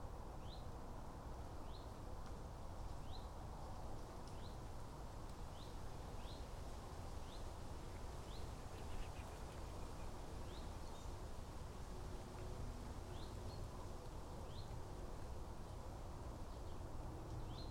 Off Whorlton Lane, Woolsington, UK - Area around source of Ouseburn
Recording in trees on bridle path off Whorlton Lane, near source of Ouseburn river near Newcastle Airport. Bird call in trees, wind in trees, aeroplane overhead. Recorded on Sony PCM-M10